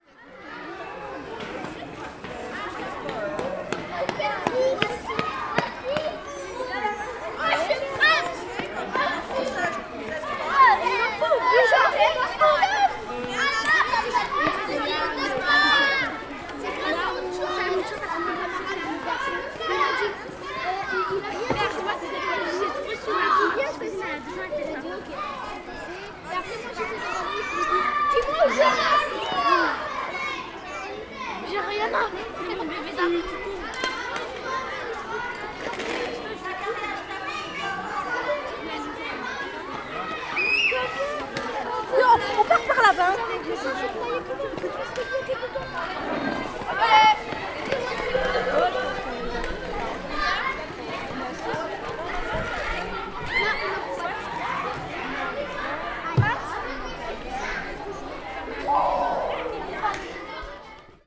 Hautepierre, Strasbourg, France - EcoleGaletHautepierre
à la sortie des cours . c'est le week-end !